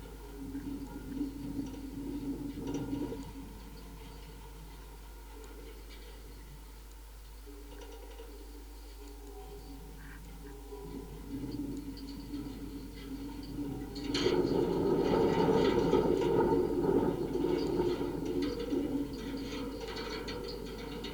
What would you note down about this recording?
contact microphones attached to metal fence. day is very windy